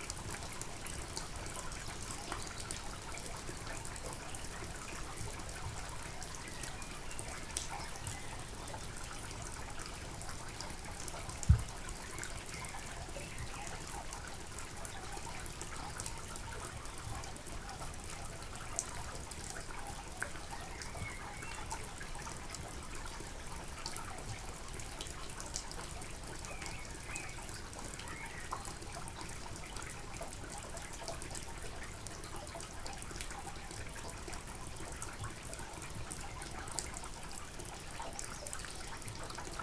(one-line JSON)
{"title": "Sasino, summerhouse at Malinowa Road - storm fade out", "date": "2019-06-20 06:28:00", "description": "a sudden, intense storm bursts out. recording on a covered porch. It all takes only couple of minutes and the downpour stops within 20 seconds. the static of the rain changes into specious, calming ambience of water flowing in gutters, drops falling from the roof and birds in the trees nearby. (roland r-07)", "latitude": "54.76", "longitude": "17.74", "altitude": "23", "timezone": "Europe/Warsaw"}